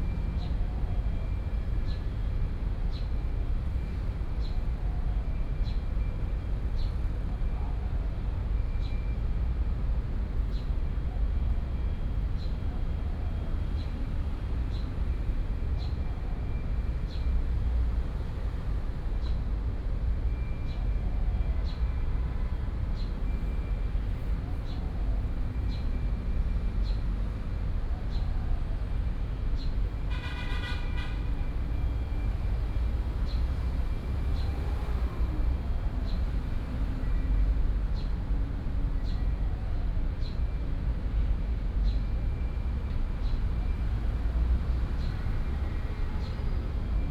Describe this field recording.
Bird calls, Traffic noise, Very hot weather